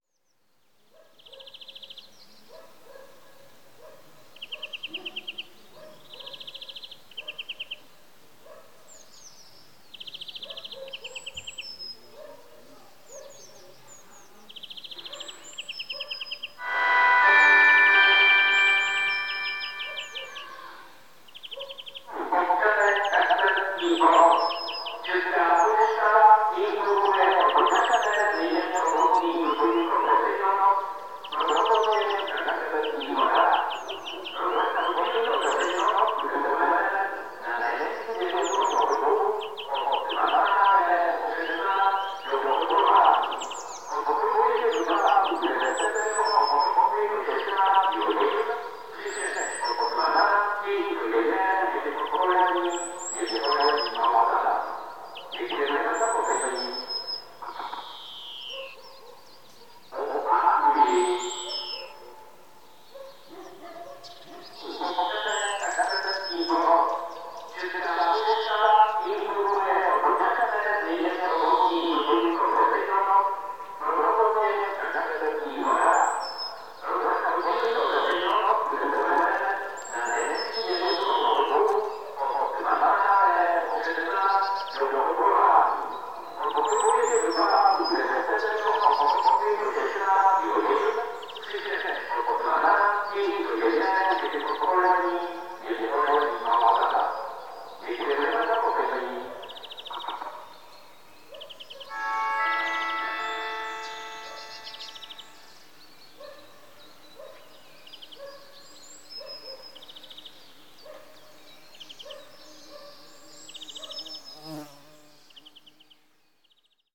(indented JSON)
{
  "title": "Červená, Kašperské Hory, Czechia - Post Office announcement in Cervena",
  "date": "2020-02-26 14:00:00",
  "description": "The recording was captured in the small village of Cervena in the Sumava National Park. Through small tannoys in the village center, the announcer warns about the post office limited hours in the nearby village of Kašperské Hory.\nThese daily announcements have started 2 weeks ago, keeping the inhabitants of Cervena up-to-date on the government's measures to tackle the spread of the virus.",
  "latitude": "49.12",
  "longitude": "13.59",
  "altitude": "830",
  "timezone": "Europe/Prague"
}